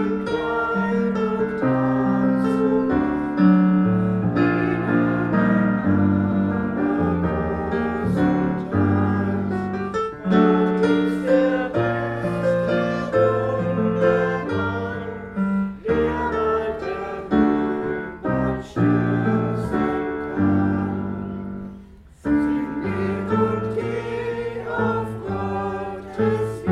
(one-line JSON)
{"title": "Gießen, Deutschland - Andachtslied Lichtkirche", "date": "2014-06-13 12:30:00", "description": "The congregation, assembled from visitors, evident believers and surprise guests sing a church song.", "latitude": "50.59", "longitude": "8.69", "altitude": "160", "timezone": "Europe/Berlin"}